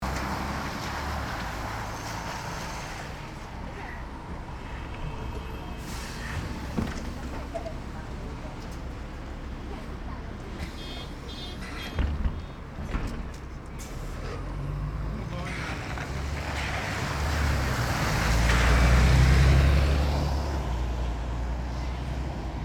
bus is leaving without man